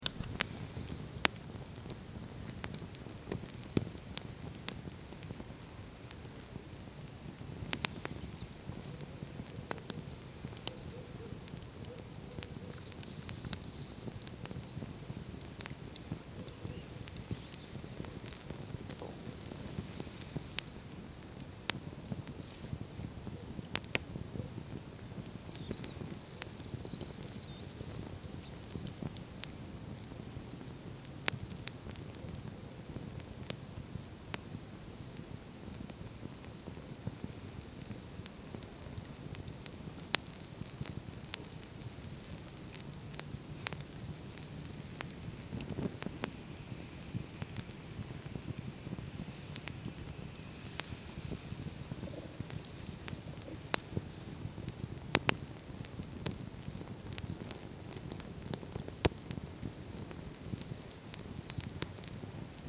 {
  "title": "Lithuania, Utena, little fireplace in the wood",
  "date": "2011-01-20 12:42:00",
  "description": "little campfire on the snow for my heathen heart",
  "latitude": "55.52",
  "longitude": "25.63",
  "timezone": "Europe/Vilnius"
}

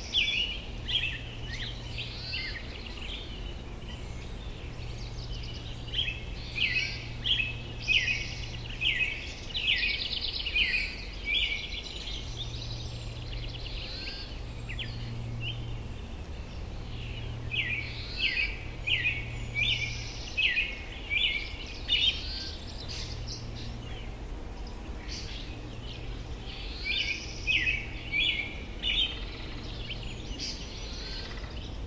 {
  "title": "Oak Island, Sauvie Island OR, USA - Oregon White Oak Savanna, Sauvie Island",
  "date": "2018-05-19 15:48:00",
  "description": "Dusk recording of an Oregon White Oak Stand on Sauvie Island. Recording at the edge of where a Roemer's fescue meadow meets a denser stand of oaks. Evening wind rustles oak leaves. Black-headed Grosbeak, Bewick's Wren sing, Woodpecker (Northern Flicker?) drums.\nRecording using Jecklin Disk with Schoeps MK2 omni capsules into a Nagra Seven recorder.",
  "latitude": "45.72",
  "longitude": "-122.82",
  "altitude": "8",
  "timezone": "America/Los_Angeles"
}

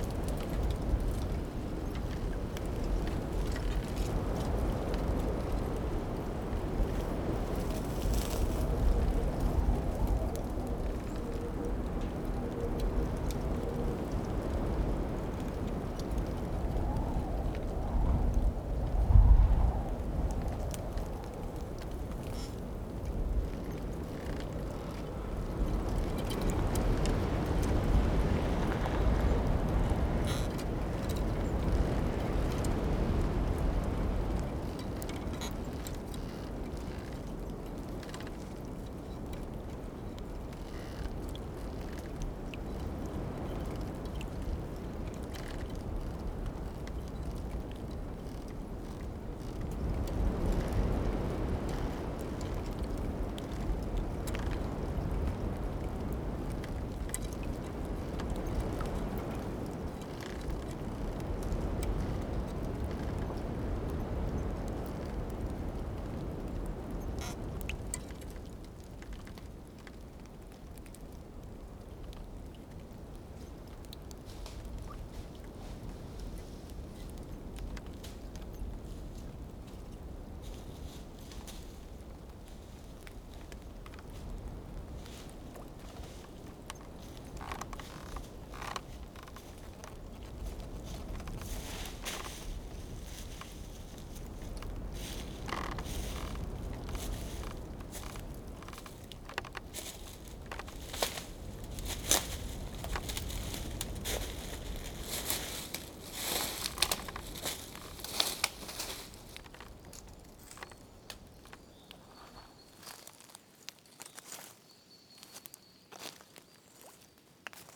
{
  "title": "Vierge du Villaret, Pont-de-Montvert-Sud-Mont-Lozère, France - A L OMBRE DU VENT #1",
  "date": "2021-04-06 16:07:00",
  "description": "Cueillette et ballade en Lozère par temps de vent et de pluie!\nles herbes sèches tintent, les branches grincent, les fils sifflent et les portails chantent.",
  "latitude": "44.34",
  "longitude": "3.69",
  "altitude": "1101",
  "timezone": "Europe/Paris"
}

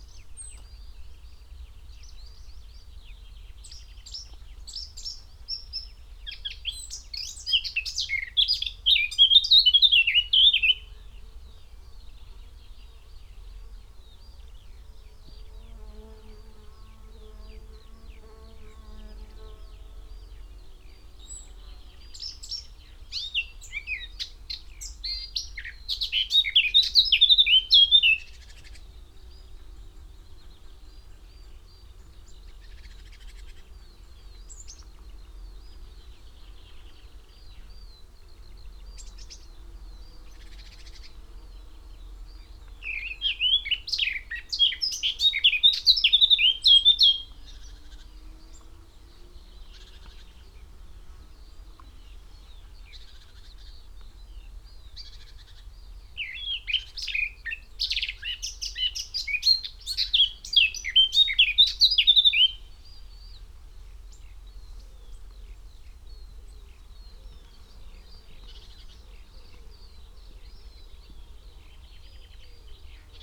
{
  "title": "Malton, UK - blackcap song soundscape ...",
  "date": "2021-06-27 06:12:00",
  "description": "blackcap song soundscape ... xlr SASS on tripod to ZoomH5 ... bird calls ... song ... from ... wood pigeon ... song thrush ... chaffinch ... whitethroat ... skylark ... crow ... great tit ... great spotted woodpecker ... roe deer after 34.30 mins .. ish ... extended unattended time edited recording ...",
  "latitude": "54.14",
  "longitude": "-0.55",
  "altitude": "126",
  "timezone": "Europe/London"
}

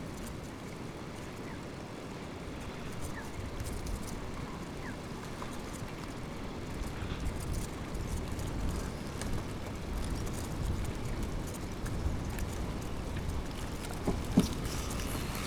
{
  "title": "Lithuania, Sudeikiai, movement of thin ice bits - movement of thin ice bits",
  "date": "2012-04-15 15:27:00",
  "description": "temperature is about + 14, however there's still some ice on big lake. and thin ice bits on the shore moving with wind and waves",
  "latitude": "55.59",
  "longitude": "25.69",
  "altitude": "138",
  "timezone": "Europe/Vilnius"
}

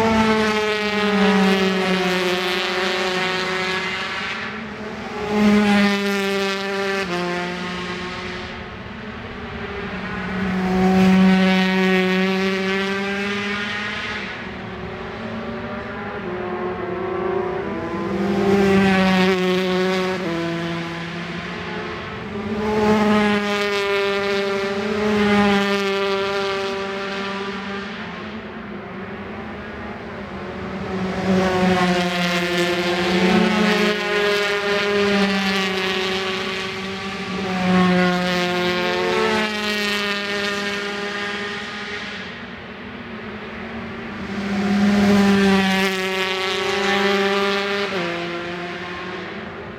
{
  "title": "Brands Hatch GP Circuit, West Kingsdown, Longfield, UK - british superbikes 2004 ... 125 ...",
  "date": "2004-06-19 09:31:00",
  "description": "british superbikes 2004 ... 125s qualifying one ... one point stereo mic to minidisk ...",
  "latitude": "51.35",
  "longitude": "0.26",
  "altitude": "151",
  "timezone": "Europe/London"
}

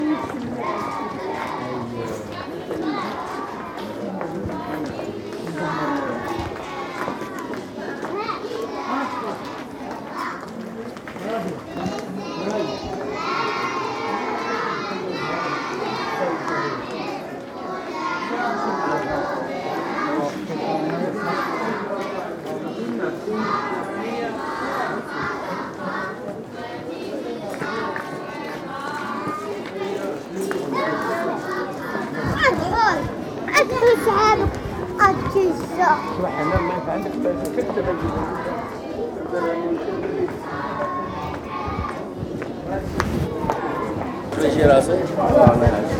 {
  "title": "Tlaa, Fes, Morocco - Koran school",
  "date": "1995-08-09 13:45:00",
  "description": "children chanting. sony MS mic. dat recorded",
  "latitude": "34.06",
  "longitude": "-4.98",
  "altitude": "368",
  "timezone": "Africa/Casablanca"
}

